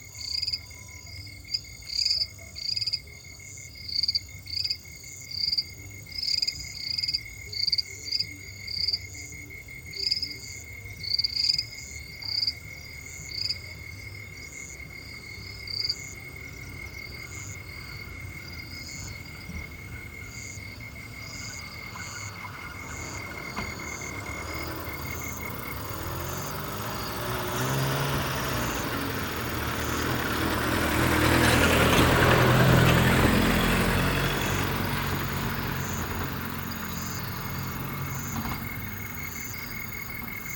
Species of insects and toads yet to be identified and documented for Bioscience Research of the vicinity.
Voices are transparent in distinctive immersive fields.
Human Voices and auto engines can be heard far and near.
Field Recording Gear: Soundman OKM with XLR adapters, ZOOM F4 Field Recorder.
Eastern Region, Ghana, 20 August 2021, 21:30